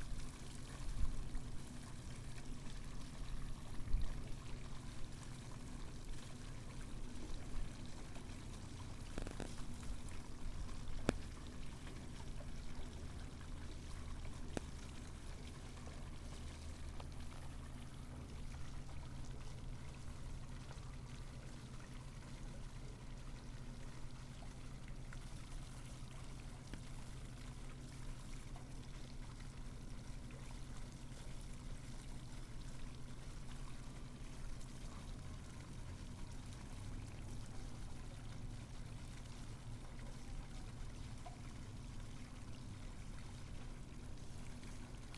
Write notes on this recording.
Wriston fountain after being "bubble-bombed."